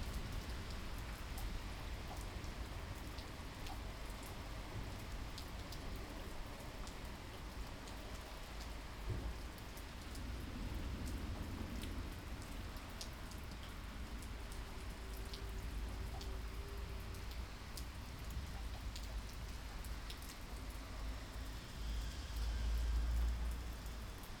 Utena, Lithuania, rain and thunder